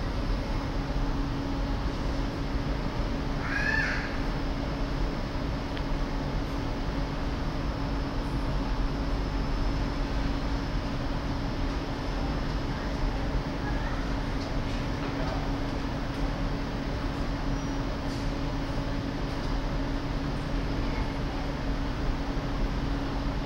hamm, bahnhof, gleis 5, ansage
auf den bahnhofgleisen am frühen abend, eine zugansage
soundmap nrw:
social ambiences, topographic field recordings